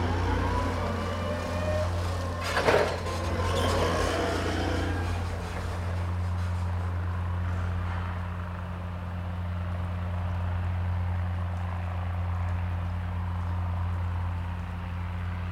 Old Sarum Park, Salisbury, UK - 016 MetalTec
16 January 2017, 12:08